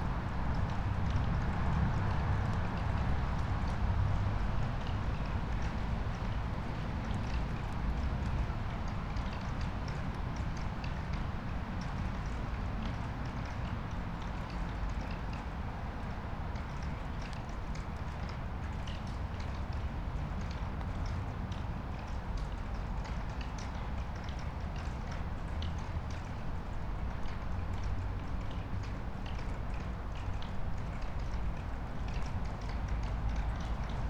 Lithuania, Vilnius, rain on fence
Vilnius district municipality, Lithuania